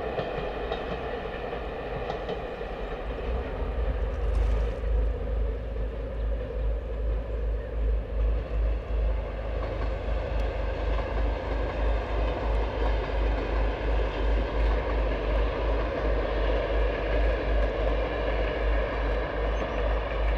Manha ao pe do rio em Nagozelo do Douro. Mapa Sonoro do Rio Douro. Morning soundscape in Nagozelo do Douro, Portugal. Douro River Sound Map.